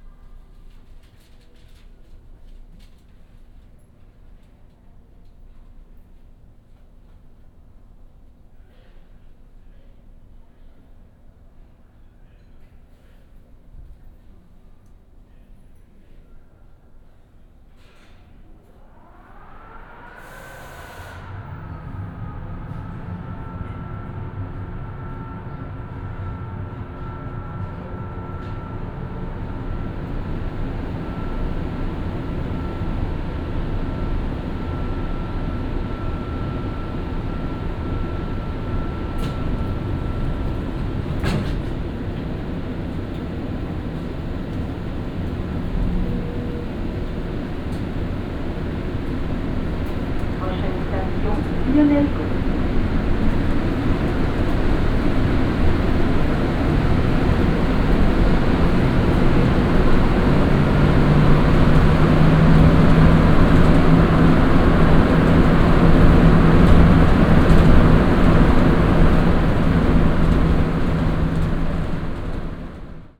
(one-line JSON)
{"title": "Montreal: Place St-Henri Station (inside train) - Place St-Henri Station (inside train)", "date": "2009-03-19 19:52:00", "description": "equipment used: Olympus LS-10 & OKM Binaurals\nInside a metro car on the orange line heading east, the train was shut off for almost 10 minutes. It was shockingly quiet with the engine and fans off... Listen for when it starts back up.", "latitude": "45.48", "longitude": "-73.59", "altitude": "22", "timezone": "America/Montreal"}